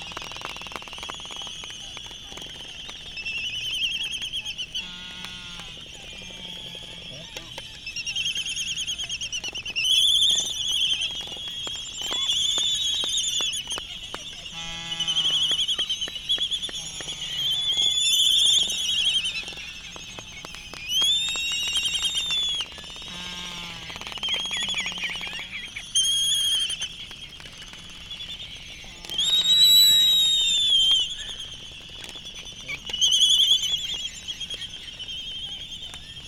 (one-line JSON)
{"title": "United States Minor Outlying Islands - Laysan albatross dancing ...", "date": "2012-03-12 19:01:00", "description": "Sand Island ... Midway Atoll ... Laysan albatross dancing ... upwards of eight birds involved ... birds leaving and joining ... lavalier mics either side of a fur covered table tennis bat ... think Jecklin disc ... though much smaller ... background noise ... they were really rocking ...", "latitude": "28.22", "longitude": "-177.38", "altitude": "9", "timezone": "Pacific/Midway"}